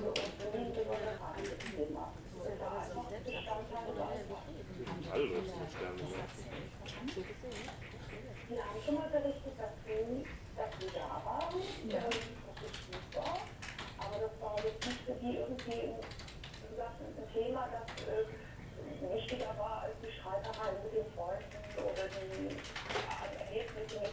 The sound captures the lively atmosphere at the WDR 5 hotline just next to the broadcasting studio (on air with WDR 5 Tagesgespräch) at the Funkhaus Cologne